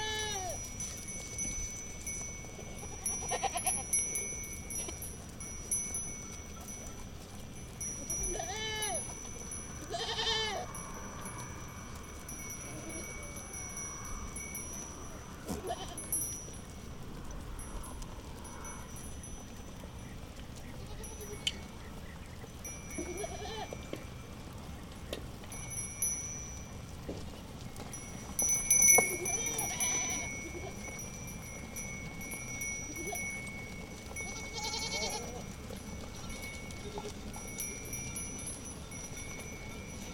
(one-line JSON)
{"title": "Pocinho, praia fluvial, Portugal - Pastor de cabras, Pocinho", "date": "2010-07-12 18:00:00", "description": "Pastor e suas cabras ao longo do Douro. Pocinho Mapa Sonoro do Rio Douro. Sheppard and his goats along the Douro. Pocinho, Portugal. Douro River Sound Map", "latitude": "41.13", "longitude": "-7.12", "altitude": "114", "timezone": "Europe/Lisbon"}